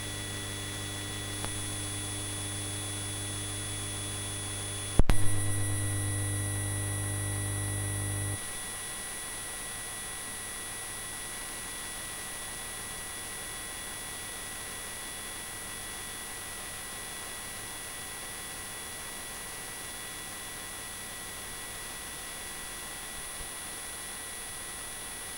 Majori, Jurmala, Latvia, train EMF
listening to electric train with Soma "Ether" EMF sensor
Vidzeme, Latvija, 14 August 2022, 13:20